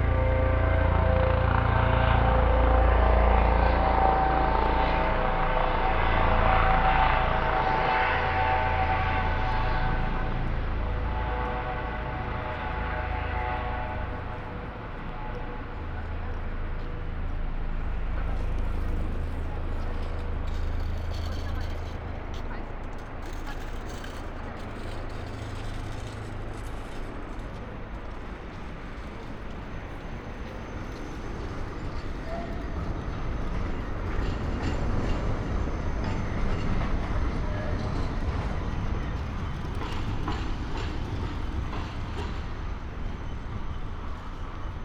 Marktplatz, Halle (Saale), Deutschland - walking
walking around on Marktplatz, Halle, Sunday evening in October. Trams and people.
(Sony PCM D50, Primo EM172)